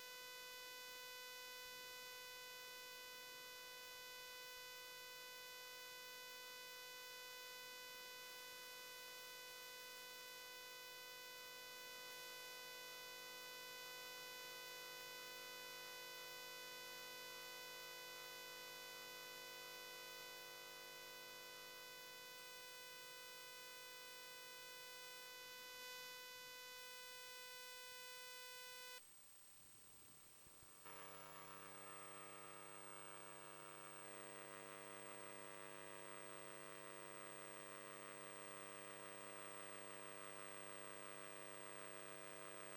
Svalbard, Svalbard and Jan Mayen - SvalSat, Svalbard Satelite Station
The recording is from the electromagnetic noise picked up at the NASA Satelite station.
Longyearbyen, Svalbard and Jan Mayen, 10 October 2011